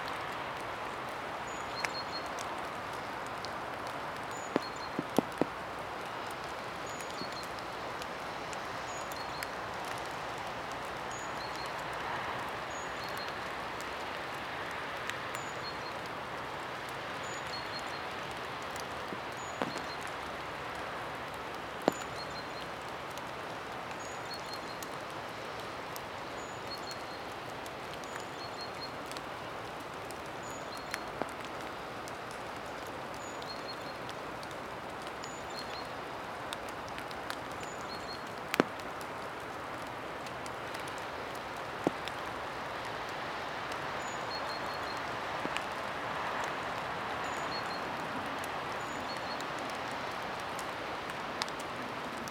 Malmedy, Belgique - Cars, rain and birds
Light rain under an umbrella.
Tech Note : Sony PCM-D100 internal microphones, wide position.
Liège, Wallonie, België / Belgique / Belgien, 4 January 2022, 11:42